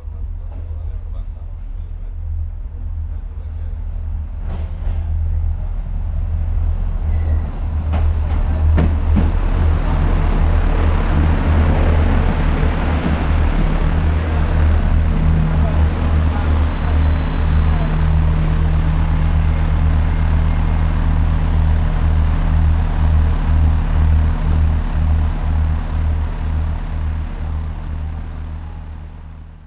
main station, Aix-en-Provence, France - station ambience
morning ambience Aix en Provence main station, announcement, regional train is arriving, drone
(PCM D50, Primo EM172)